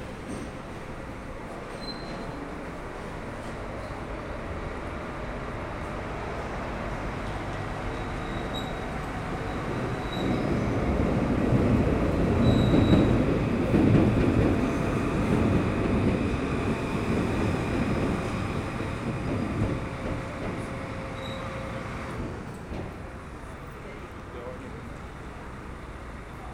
Paris, France - Austerlitz station
A long ride into the Paris metro and the Austerlitz station.
Entrance of the metro, travel into the metro, going out during long tunnels, announcements of the Austerlitz station, some trains arriving, lot of people going out with suitcases.